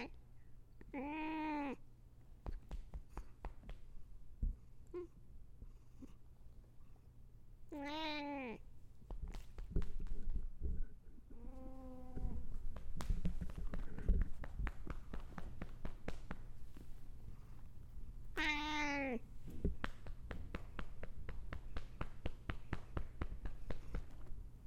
{
  "title": "231台灣新北市新店區民生路156巷117號 - CAT",
  "date": "2021-05-26 20:26:00",
  "latitude": "24.98",
  "longitude": "121.53",
  "altitude": "17",
  "timezone": "Asia/Taipei"
}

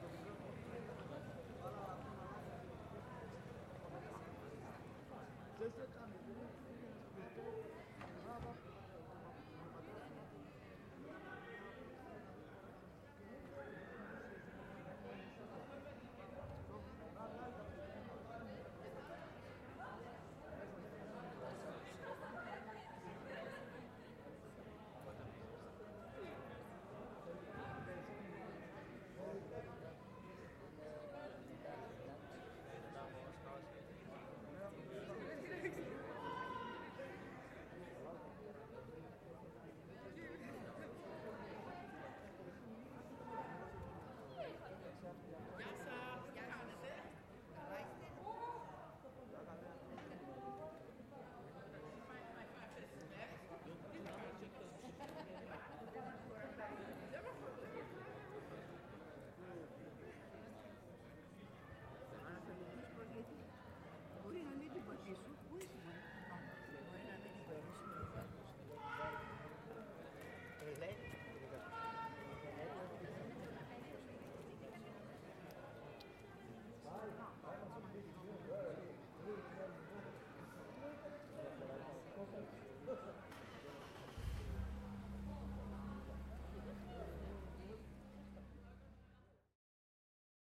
{
  "title": "Αντίκα, Ξάνθη, Ελλάδα - Metropolitan Square/ Πλατεία Μητρόπολης- 20:45",
  "date": "2020-05-12 20:45:00",
  "description": "People talking distant, light traffic.",
  "latitude": "41.14",
  "longitude": "24.89",
  "altitude": "95",
  "timezone": "Europe/Athens"
}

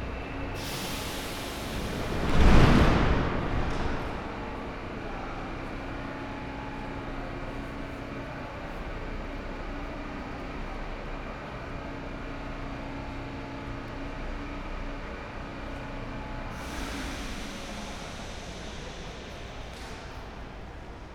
{
  "title": "Athen, Piräus - walk from harbour to metro station",
  "date": "2016-04-05 21:30:00",
  "description": "a short walk from the pier to the metro station, with focus on the station ambience\n(Sony PCM D50, Primo EM172)",
  "latitude": "37.95",
  "longitude": "23.64",
  "altitude": "10",
  "timezone": "Europe/Athens"
}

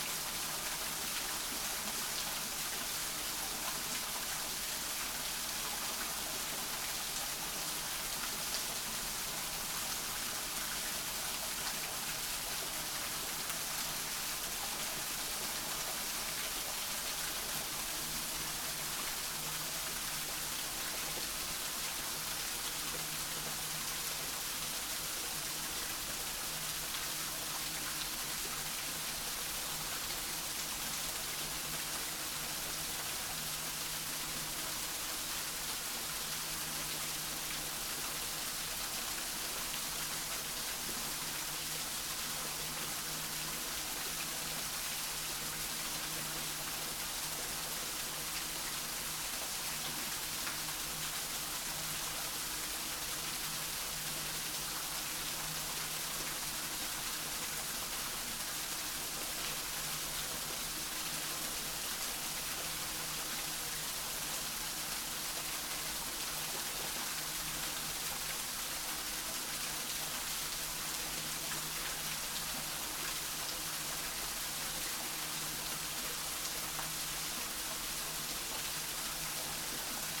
{
  "title": "Cleveland Botanical Garden, East Blvd, Cleveland, OH, USA - Cleveland Botanical Garden Rainforest",
  "date": "2022-01-31 14:30:00",
  "description": "This is the sound of the cascade inside the Costa Rica Glasshouse rainforest at the Cleveland Botanical Garden. Recorded on the Sony PCM-D50.",
  "latitude": "41.51",
  "longitude": "-81.61",
  "altitude": "207",
  "timezone": "America/New_York"
}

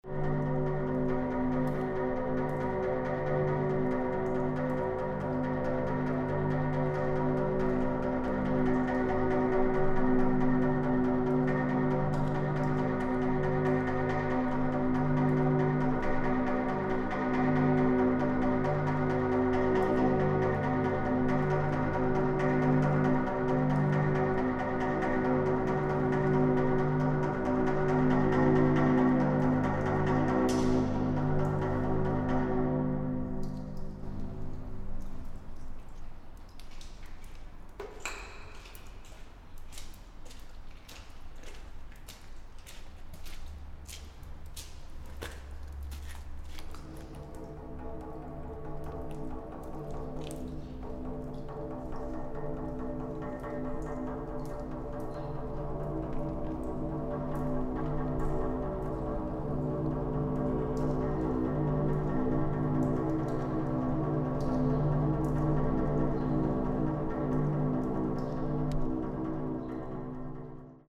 {
  "title": "Vila Franca de Xira, Portugal - Metallic Silo percussion",
  "date": "2008-04-08 16:30:00",
  "description": "hand percussion on ancient metallic silo on an abandoned factory. recorder: M-Audio Microtrack + Canford Audio MS preamp + Akg Mid-stereo kit.",
  "latitude": "38.95",
  "longitude": "-8.99",
  "timezone": "Europe/Lisbon"
}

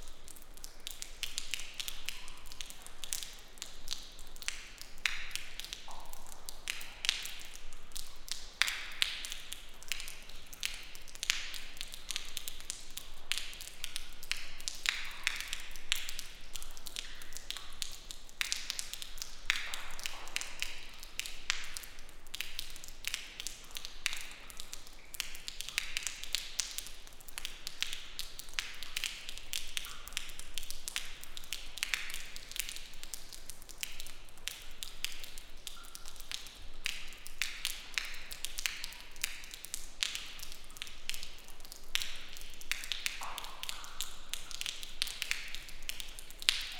{
  "title": "Baggböle kraftverk, Umeå. Raindrops from leaking - Baggböle kraftverk, Umeå. Raindrops from leaking roof#2",
  "date": "2011-05-06 15:54:00",
  "description": "Baggböle kraftverk\nRecorded inside the abandoned turbine sump whilst raining outside. Drips from leaking roof.",
  "latitude": "63.84",
  "longitude": "20.12",
  "altitude": "28",
  "timezone": "Europe/Stockholm"
}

